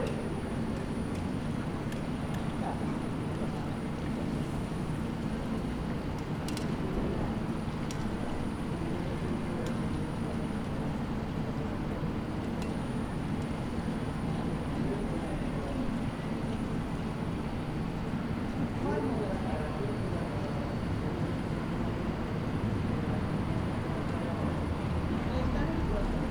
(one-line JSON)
{"title": "Rotuaarinaukio, Oulu, Finland - Slow day in Oulu", "date": "2020-05-24 14:30:00", "description": "Ambiance in the centre of Oulu on the first proper summer weekend of 2020. Rather quiet as people spend their time elsewhere. Zoom h5 with default X/Y module.", "latitude": "65.01", "longitude": "25.47", "altitude": "15", "timezone": "Europe/Helsinki"}